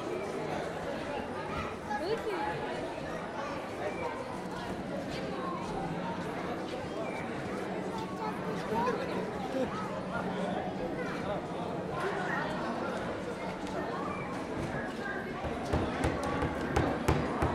National amusement park, Ulaanbaatar, Mongolei - whac a mole!
children's day, situation where everyone at the same time 'whac a mole' - long preperation, short game